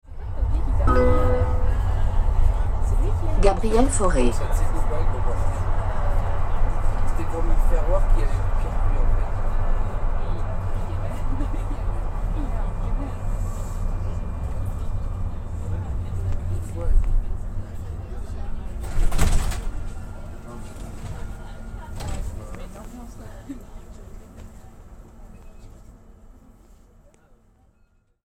Agn s at work Gabriel Foré RadioFreeRobots